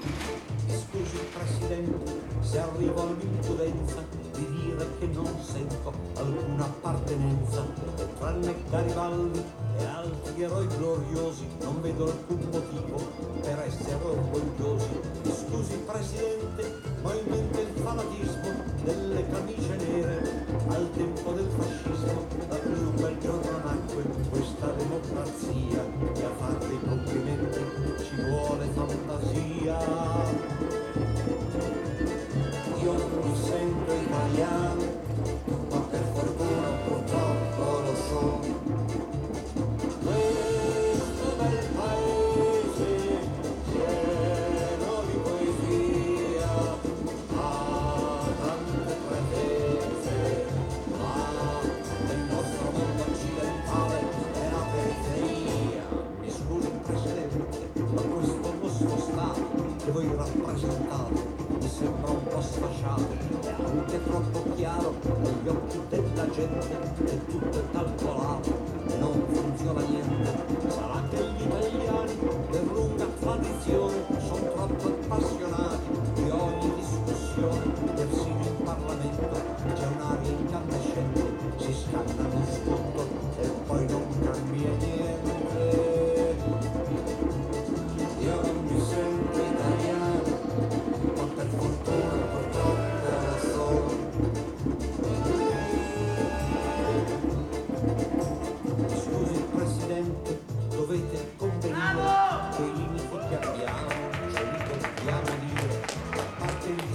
Ascolto il tuo cuore, città. I listen to your heart, city. Several chapters **SCROLL DOWN FOR ALL RECORDINGS** - FlashMob al tempo del COVID19” Soundscape
Friday March 13 2020. Fixed position on an internal terrace at San Salvario district Turin, three days after emergency disposition due to the epidemic of COVID19.
Start at 6:18 p.m. end at 6:48 p.m. duration of recording 30'00''
13 March 2020, 06:18